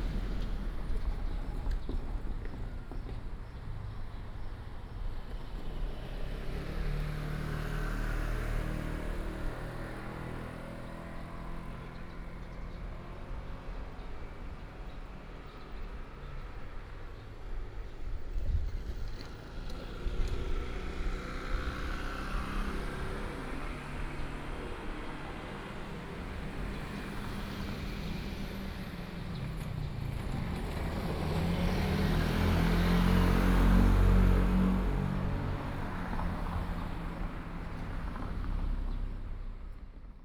Xingnong Rd., Jiaoxi Township - Beside the railway crossing
Beside the railway crossing, A train traveling through, Very hot weather, Traffic Sound
Jiaoxi Township, Yilan County, Taiwan